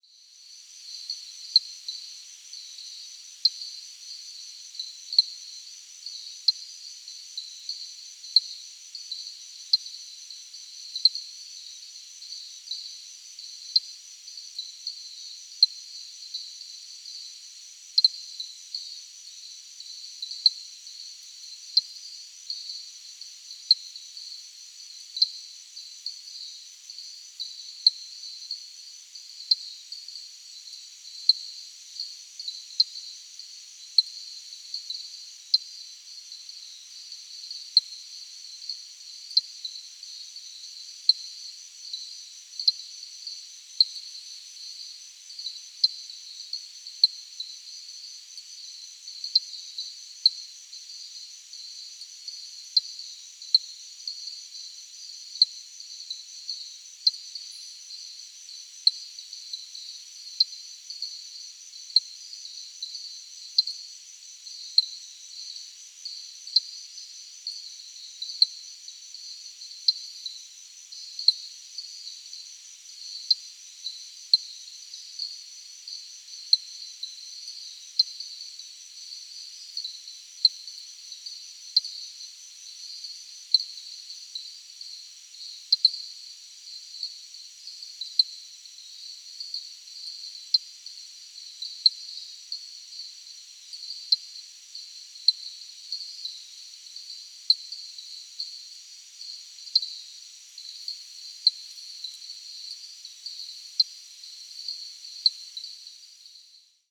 Costa Rica - Alternating tink frogs in stereo
Two tink frogs tinking. Recorded on walk between Playa Cocles and Puerto Viejo de Talamanca. Zoom H2 with highpass filter post-processing.